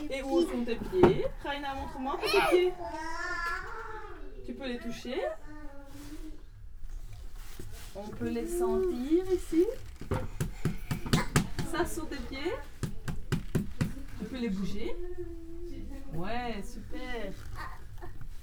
Quartier des Bruyères, Ottignies-Louvain-la-Neuve, Belgique - Escalpade school
Escalpade school is a place intended for children who have intellectual disability, learning disability and physical deficiency. This school do Bobath NDT re-education (Neuro Developpemental Treatment).
This recording is a course. A professor explains where to wear socks and panties. She shows the wrong places : on the hands, on the head, in aim children physically understand the place is wrong.